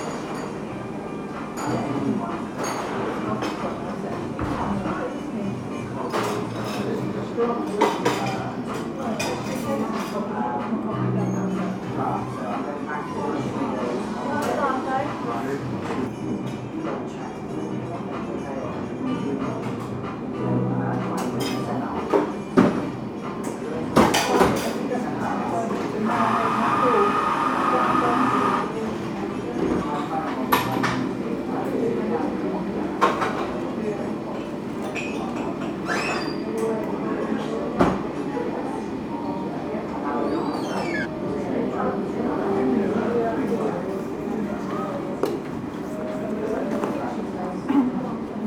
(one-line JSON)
{"title": "Street Sounds and Busy Cafe, Woodbridge, UK", "date": "2022-02-08 12:49:00", "description": "Street sounds with a guitarist busker then into a busy cafe for lunch. The coffee machine is in front and people at tables all around mostly on the left. There is some gentle low cut applied due to noisy fans.\nMixPre 6 II with two Sennheiser MKH 8020s", "latitude": "52.09", "longitude": "1.32", "altitude": "8", "timezone": "Europe/London"}